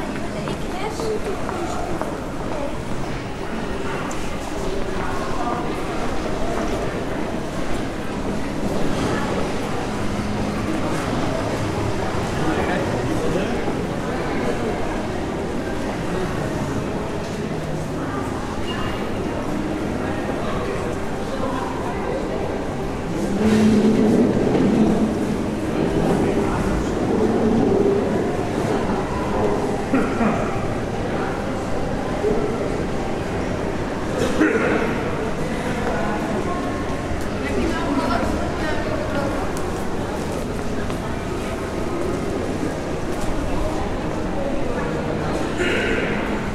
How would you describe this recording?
Shopping mall during sound walk